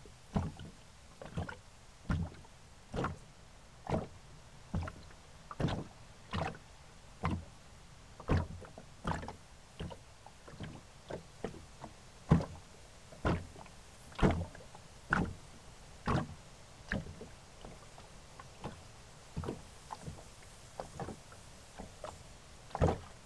Stabulankiai., Lithuania, in the boat